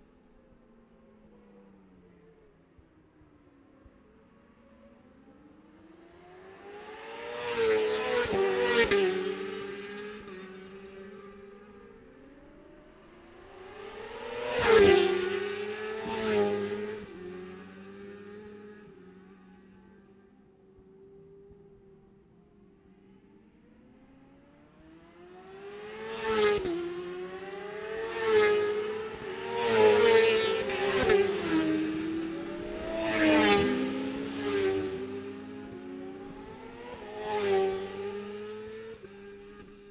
Gold Cup 2020 ... 600 evens practice ... Memorial Out ... dpa 4060s to Zoom H5 clipped to bag ...
11 September, 10:29